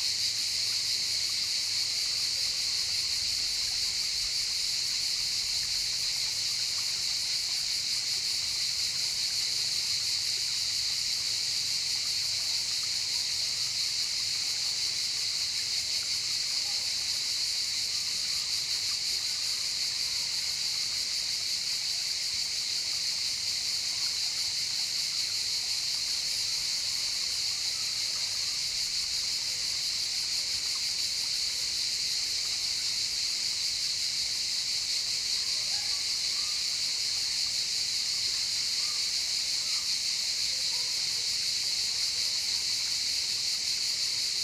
Villa and Botanic Garden, Karasan (by Alushta Uteos), Crimea, Ukraine - botanic garden, above the sea, cicades & waves
Sitting above the beach, listening to the cicades, a rinse and seawaves with the zoom recorder. svetlana introduces the villa / castle, afar kids play in the water.
karasan, once a village, got sourrounded by a sanatorium complex built in the 60ies. the about 80 former citizens at the time were resettled to other towns when the whole area was sold to an infamous gas-company. only two residents remained protesting. they are still there. we live here, inmidst a forest from pine, bamboo, cypresses, olives and peaches with that 86-year-old woman in a pretty hut.
don't mind the broken windows of the sanatorium, it is still intact, old lung-patients dry their self-caught fish. the soviet sport-site falling apart in the sun it looks like greek ruins from centuries ago - the tourists are dying out.
Республика Крым, КФО, Україна